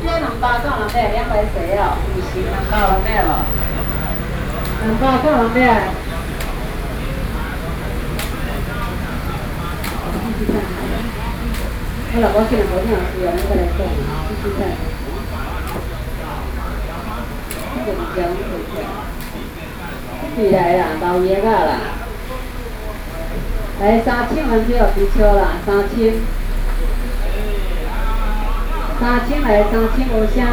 康定路, Taipei City, Taiwan - Flea Market
31 October 2012, 19:41